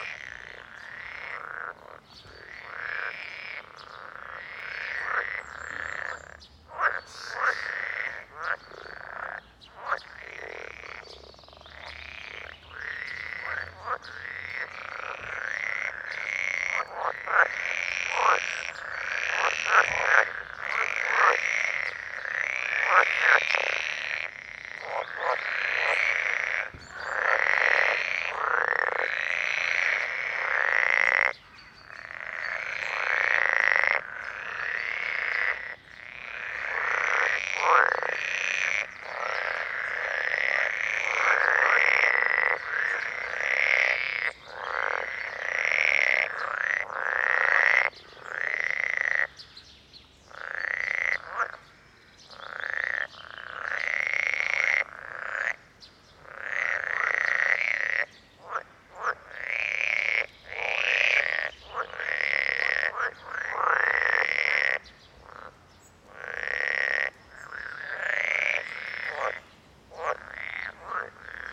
{"title": "Frog pond, Mooste, Estonia - frog pond with Ranna lessonae", "date": "2012-06-10 14:40:00", "description": "local frog pond in Mooste with Rana lessonae or 'pool frog'.", "latitude": "58.16", "longitude": "27.19", "altitude": "52", "timezone": "Europe/Tallinn"}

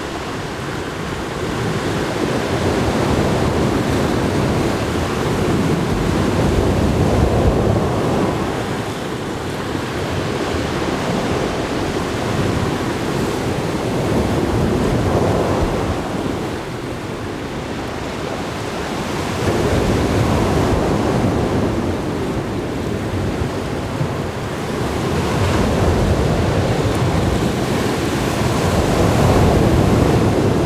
{"title": "Anse du Cul Rond, France - Vagues Anse du Cul Rond", "date": "2014-10-30 12:30:00", "description": "Waves on rocks and soft stones, Anse du Cul Rond, Zoom H6, Neumann and Rode \"canon\" Microphones…", "latitude": "49.68", "longitude": "-1.94", "altitude": "7", "timezone": "Europe/Paris"}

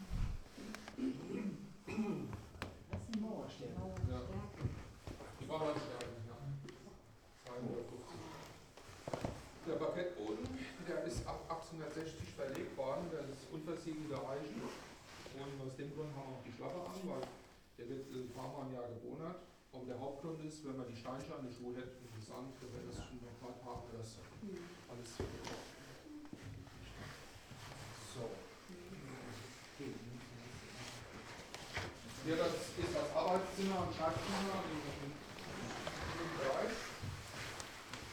{"title": "niederheimbach: burg sooneck - sooneck castle tour 2", "date": "2010-10-10 16:16:00", "description": "guided tour through sooneck castle (2), entrance hall & different rooms, guide continues the tour, visitors follow him with overshoes\nthe city, the country & me: october 17, 2010", "latitude": "50.02", "longitude": "7.82", "altitude": "203", "timezone": "Europe/Berlin"}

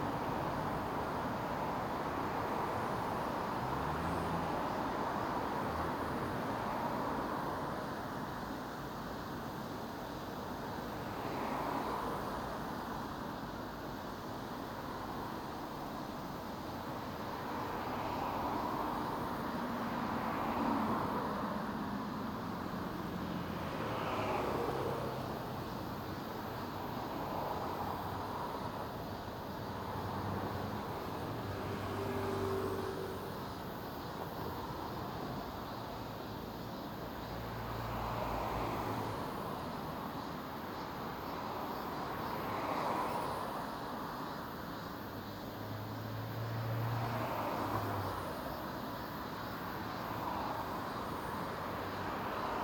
{"title": "대한민국 서울특별시 서초구 서초3동 1748-14 - Cicada, Cars passing by", "date": "2019-08-10 16:50:00", "description": "Cicada, Cars passing by\n매미, 자동차 지나가는 소리", "latitude": "37.49", "longitude": "127.01", "altitude": "32", "timezone": "Asia/Seoul"}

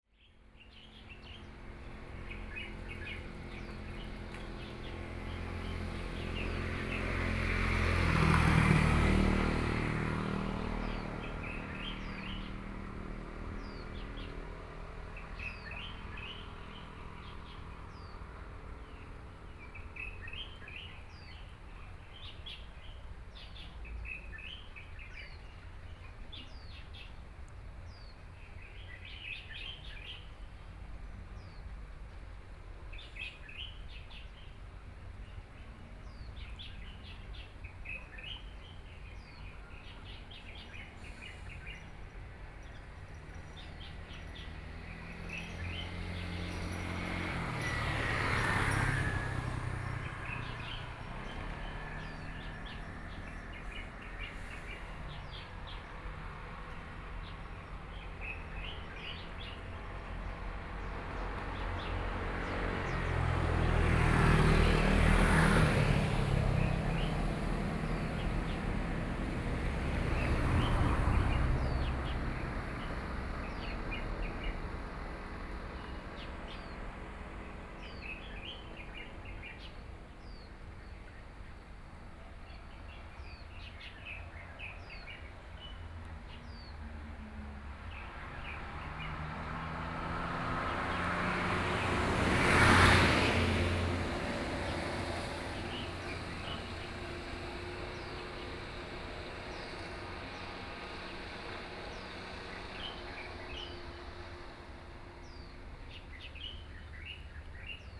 {"title": "Kangding St., Taitung City - Small village", "date": "2014-09-04 17:34:00", "description": "Small village, In the tree, Birdsong, Crowing sound, Traffic Sound", "latitude": "22.71", "longitude": "121.05", "altitude": "47", "timezone": "Asia/Taipei"}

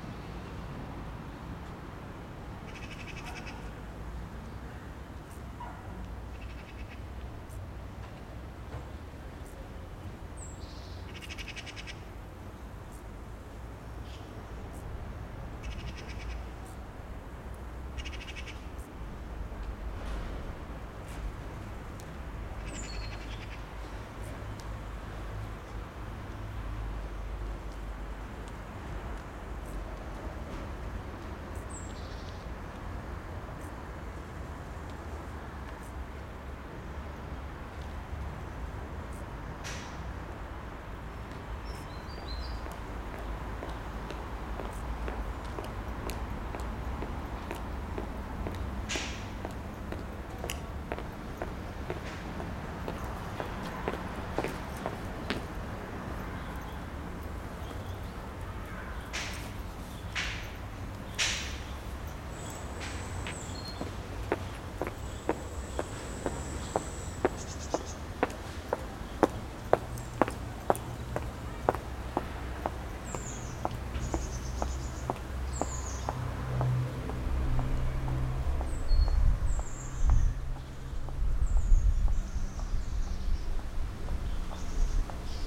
{"title": "leipzig lindenau, am karl-heine-kanal. - leipzig lindenau, am karl-heine-anal.", "date": "2011-08-31 12:00:00", "description": "am karl-heine-kanal. vogelstimmen, passanten, bauarbeiten, mittagsglocken der nahen kirche.", "latitude": "51.33", "longitude": "12.33", "altitude": "117", "timezone": "Europe/Berlin"}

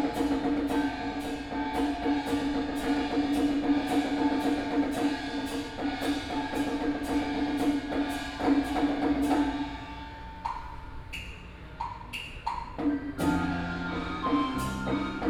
{"title": "Fuxinggang Station, Taipei - Traditional Ceremony", "date": "2013-11-15 14:59:00", "description": "In the MRT exit, Traditional Taiwanese opera ceremony is being held, Binaural recordings, Zoom H6+ Soundman OKM II", "latitude": "25.14", "longitude": "121.49", "altitude": "10", "timezone": "Asia/Taipei"}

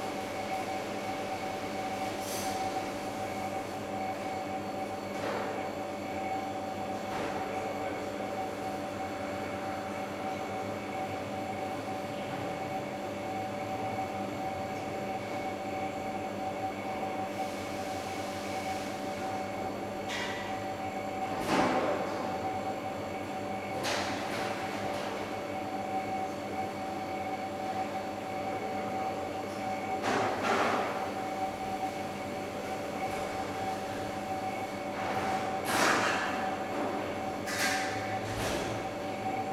{"title": "berlin, finckensteinallee: cafeteria of the german federal archives - the city, the country & me: canteen kitchen", "date": "2015-11-11 10:33:00", "description": "cafeteria of the german federal archives berlin-lichterfelde, lulled by the sound of refrigerators, kitchen staff preparing lunch\nthe city, the country & me: november 11, 2015", "latitude": "52.43", "longitude": "13.30", "altitude": "45", "timezone": "Europe/Berlin"}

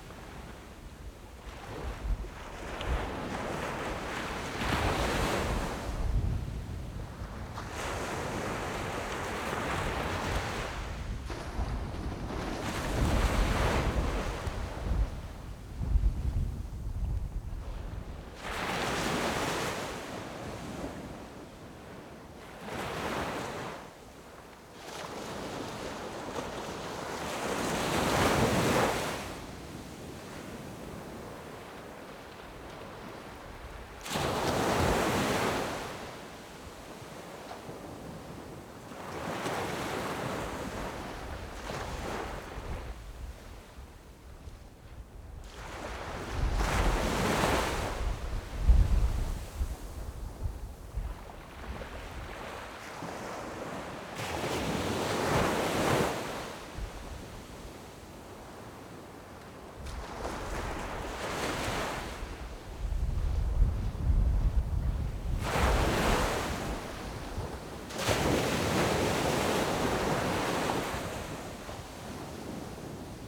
{"title": "嵵裡沙灘, Magong City - Sound of the waves", "date": "2014-10-23 13:21:00", "description": "At the beach, Windy, Sound of the waves\nZoom H6+Rode NT4", "latitude": "23.53", "longitude": "119.57", "altitude": "8", "timezone": "Asia/Taipei"}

